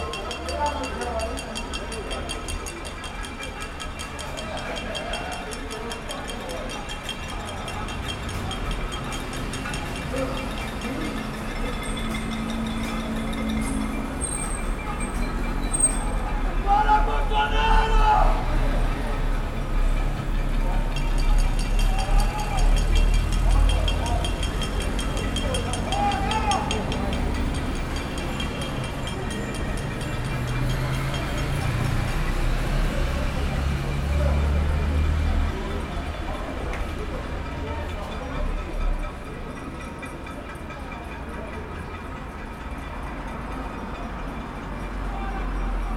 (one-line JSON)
{"title": "R. Paulo Orozimbo - Cambuci, São Paulo - SP, 01535-000, Brazil - Panelaço (Pot-banging protest) - Fora Bolsonaro! - 20h30", "date": "2020-03-18 20:30:00", "description": "Panelaço contra o presidente Jair Bolsonaro. Gravado com Zoom H4N - microfones internos - 90º XY.\nPot-banging protests against president Jair Bolsonaro. Recorded with Zoom H4N - built-in mics - 90º XY.", "latitude": "-23.57", "longitude": "-46.62", "altitude": "767", "timezone": "America/Sao_Paulo"}